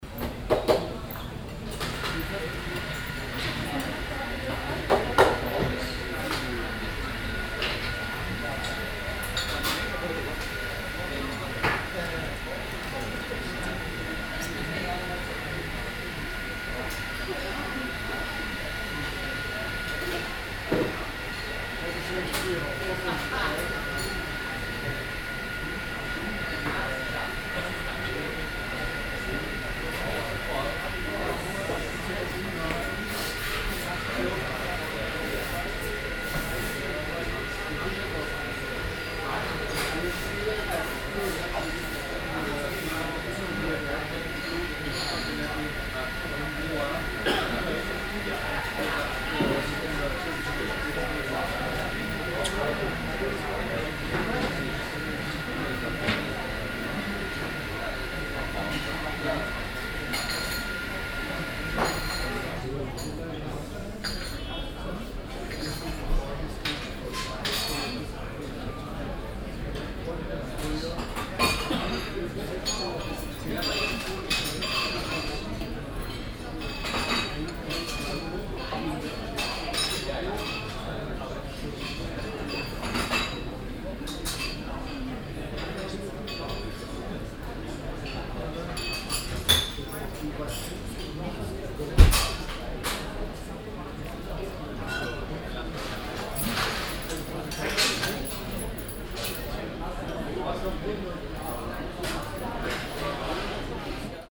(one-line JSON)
{"title": "cologne, wallrafplatz, restaurant campi", "date": "2008-07-08 16:18:00", "description": "miitagsbetrieb in der gastronomie, kafeemaschine und kleines tassenkonzert\nsoundmap nrw: social ambiences/ listen to the people - in & outdoor nearfield recordings, listen to the people", "latitude": "50.94", "longitude": "6.96", "altitude": "63", "timezone": "Europe/Berlin"}